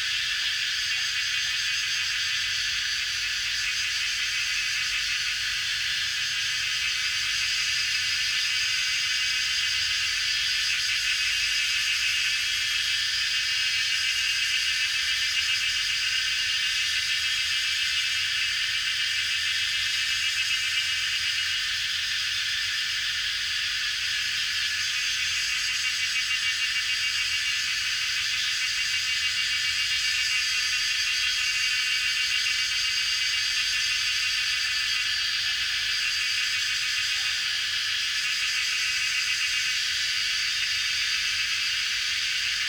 中路坑, 埔里鎮 Puli Township - In the bamboo forest
Cicada sounds, In the bamboo forest, Dogs barking
Zoom H2n MS+XY
Puli Township, 機車道, 16 May 2016, 17:43